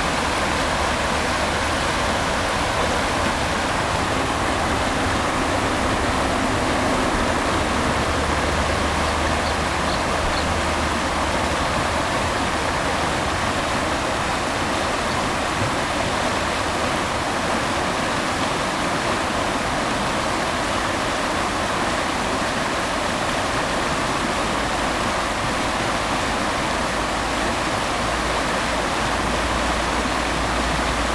Schwäbisch Gmünd, Deutschland - Rems
The river "Rems" at noon on a rainy spring day.